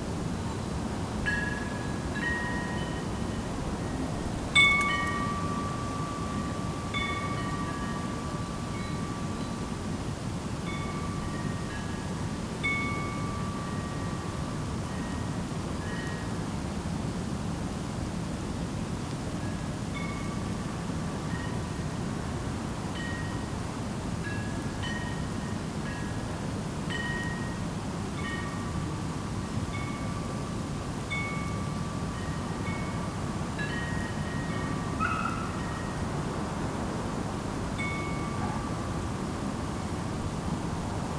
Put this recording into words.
A quiet wind chimes, a few time before the new year time. Nobody in streets, everything is quiet.